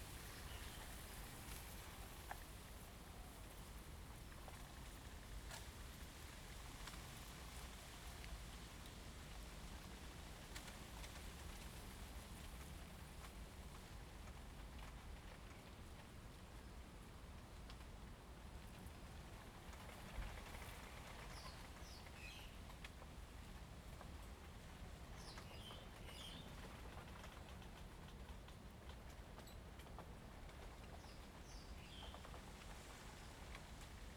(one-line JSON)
{"title": "Kinmen County, Taiwan - Next to bamboo", "date": "2014-11-04 16:18:00", "description": "Birds singing, Wind, Next to bamboo\nZoom H2n MS+XY", "latitude": "24.44", "longitude": "118.43", "altitude": "27", "timezone": "Asia/Taipei"}